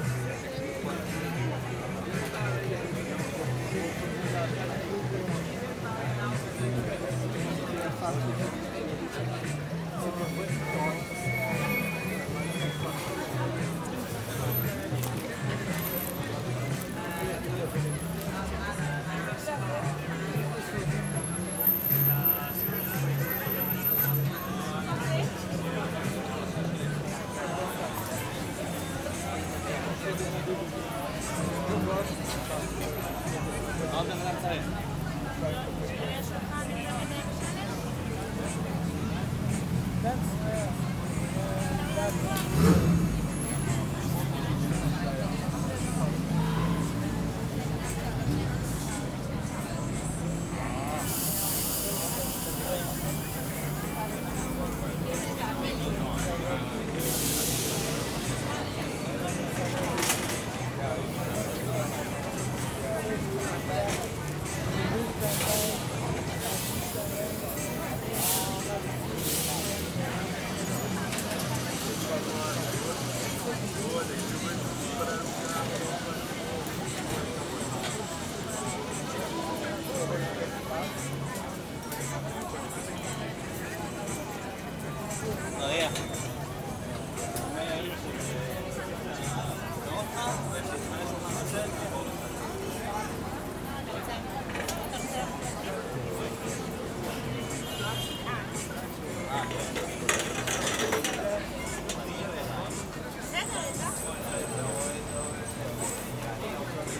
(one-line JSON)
{"title": "Quiosque do Refresco, Praça Luís de Camões - A Midday Town Square Kiosk", "date": "2017-04-14 12:50:00", "description": "Having a drink in Bairro Alto, Lisbon.\nRecorded with Zoom H6.", "latitude": "38.71", "longitude": "-9.14", "altitude": "55", "timezone": "Europe/Lisbon"}